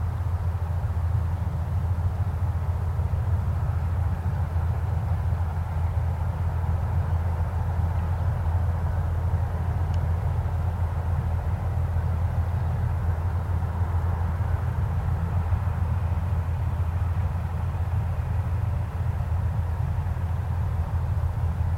Veerweg, Bronkhorst, Netherlands - Kunstgemaal Boot.
Ramblers, boat, distant traffic
Zoom H1.